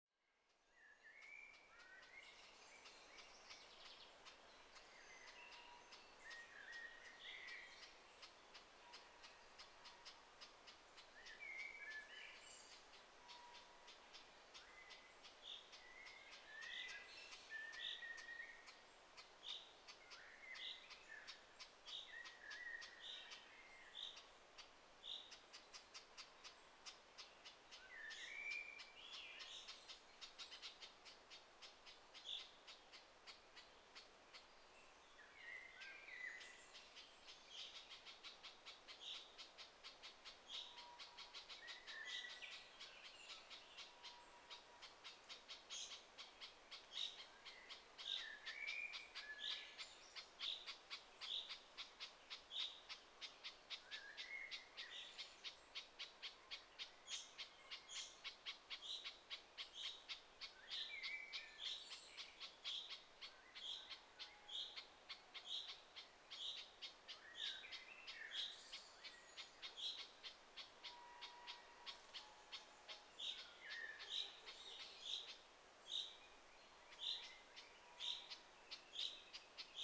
Lithuania, Utena, crossroads in the wood
windy day, some biking through local area
25 June 2011, 14:30